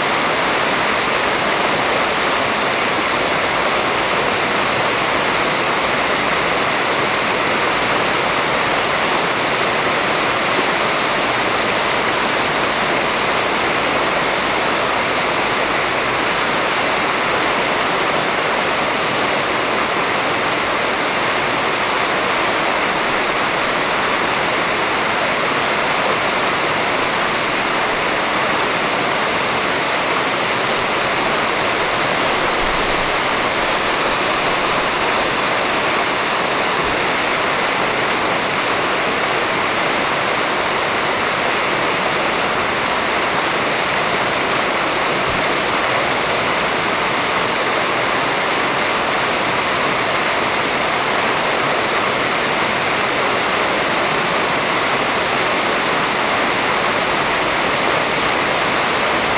Fontaine de gauche à la place du Martroi, Orléans (45 - France)